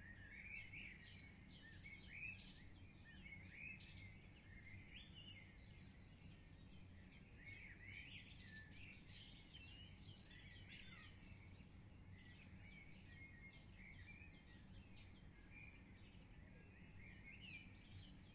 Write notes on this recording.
Early Morning singing Birds on a camping ground in Lazise, Italy.